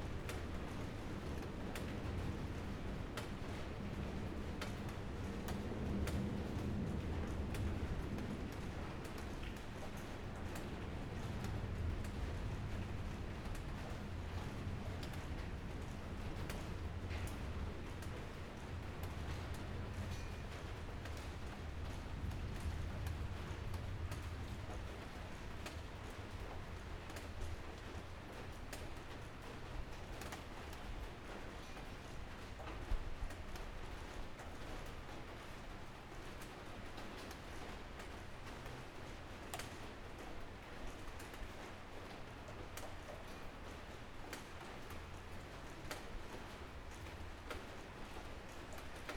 Beitou - rainy day
rainy day, Zoom H6 MS +Rode NT4, Binaural recordings
Taipei City, Taiwan, 6 March, 16:22